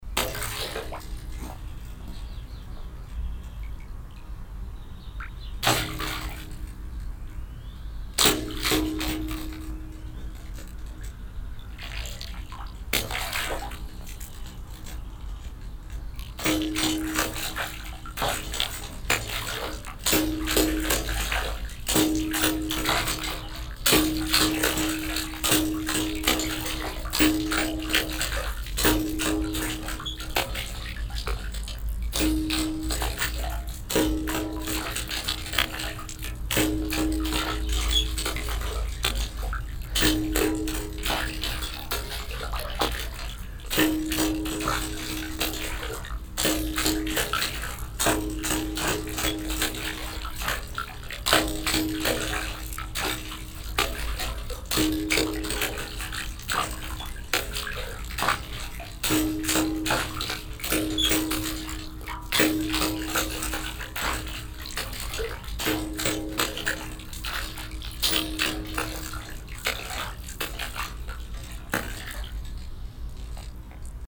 wasserorchester, platsch klavier
H2Orchester des Mobilen Musik Museums - Instrument Platsch Klavier - temporärer Standort - VW Autostadt
weitere Informationen unter